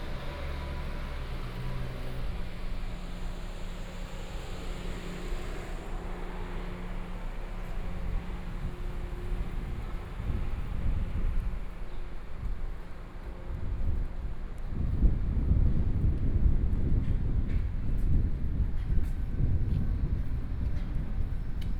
中山區興亞里, Taipei City - walking on the Road
walking on the Road, Traffic Sound
Please turn up the volume a little. Binaural recordings, Sony PCM D100+ Soundman OKM II